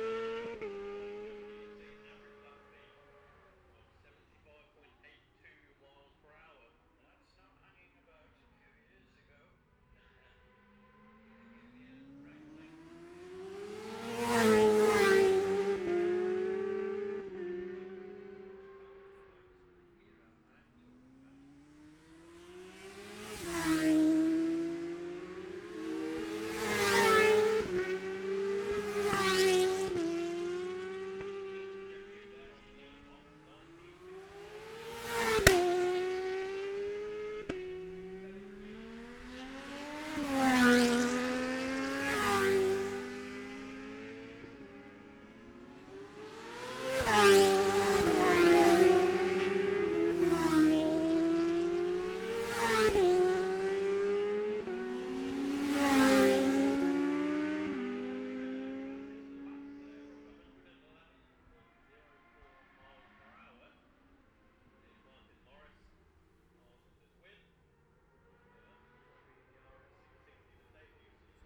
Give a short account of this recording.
the steve henshaw gold cup 2022 ... 600cc qualifying group 1 ...group two ... dpa 4060s on t-bar on tripod to zoom h5 ...